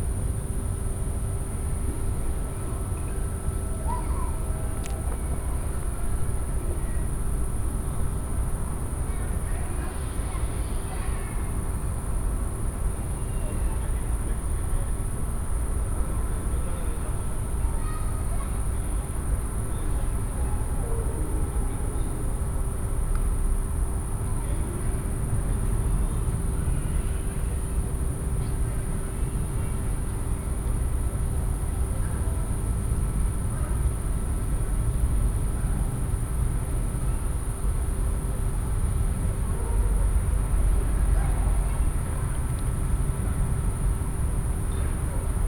Poznan, Soobieskiego housing estate - eveing ambience
(binaural) evening summer ambience around huge appartment buidlings. cicadas drone togheter with air conditioners and fans. someone is having wild sex. parents putting their kids to sleep, kids protesting. here and there man talking to each other sitting on the bench and having a beer. fright train hauling a few hundred meters from here.
Poznań, Poland, 7 August 2015, 22:50